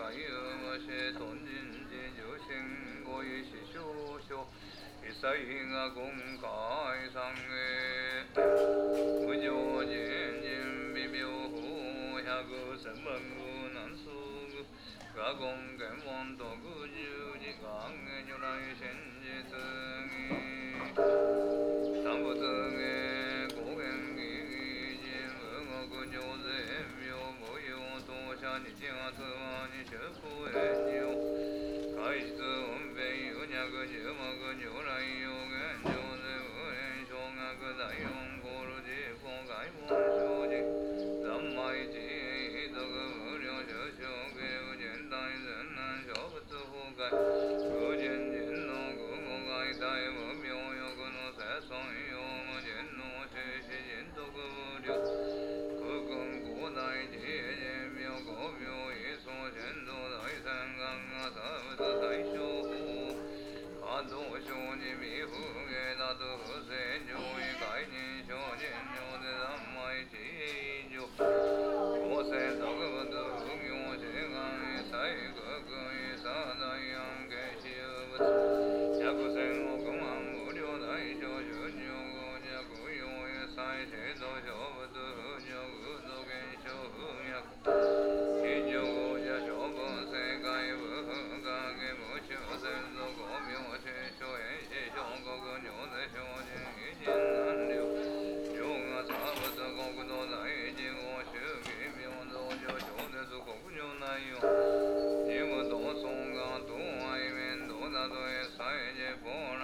Osaka, Tennōji district, Shitennoji Temple area - chanting + bell
chanting in great unison with the shrine bell. voice recorded from a speaker outside of the building.
31 March 2013, ~12:00